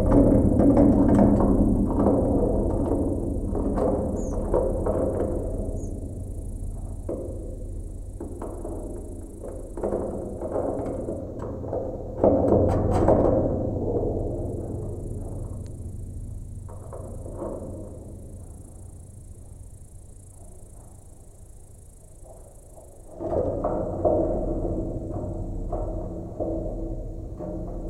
Adomiskis, Lithuania, watertower
another abandoned watertower from soviet kolchoz times...geopphone on the body of metallic tower and omni mics for ambience
Utenos apskritis, Lietuva, 26 August 2020, 14:45